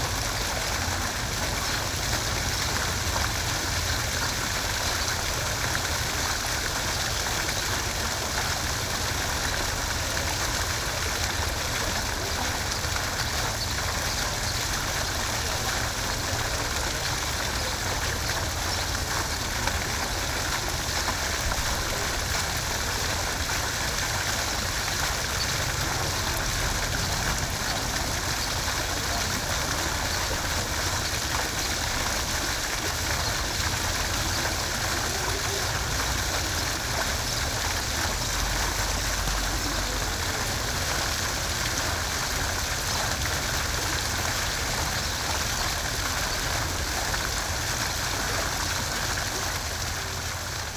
Mannheim, Deutschland - Brunnen beim ehem. Andechser
Stadt, Brunnen, Wasser, Urban
Baden-Württemberg, Deutschland, 4 June 2022